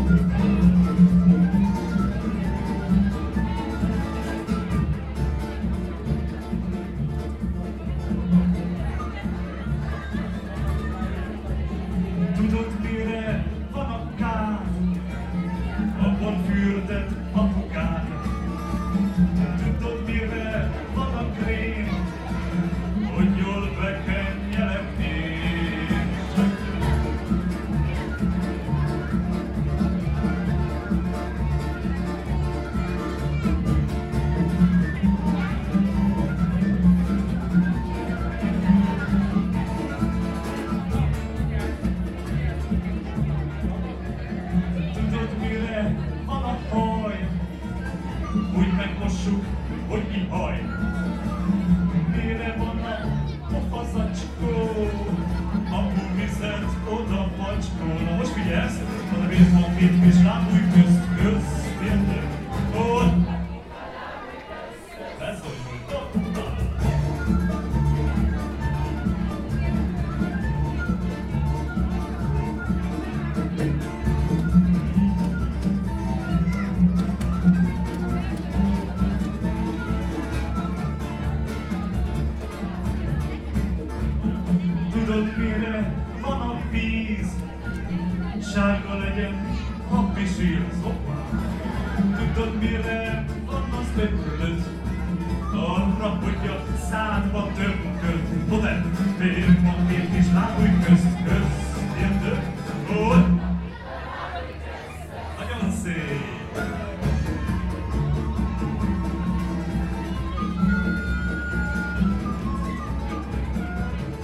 Classroom, Cluj-Napoca, Romania - (-196) Folk performance
Recording from a street-level window of a performance or rehearsal in a classroom at basement level.
Cluj, România, 31 May